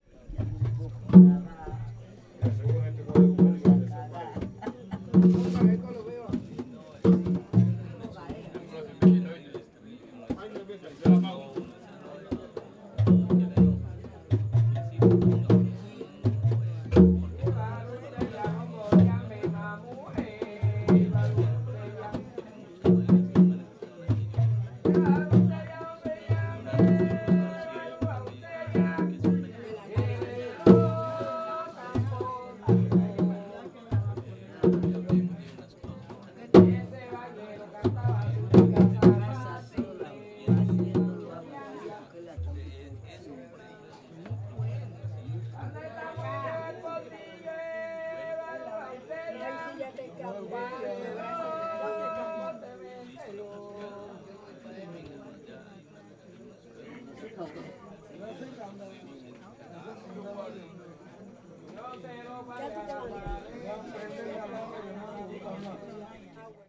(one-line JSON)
{"title": "San Basilio Del Palenque, Bolívar, Colombia - Lumbalu Evaristo Marquez 02", "date": "2013-07-15 02:03:00", "description": "Last night of the funeral ritual celebrated in San Basilio de Palenque.\nZoom H2n inner microphones\nXY mode, head's level", "latitude": "10.10", "longitude": "-75.20", "timezone": "America/Bogota"}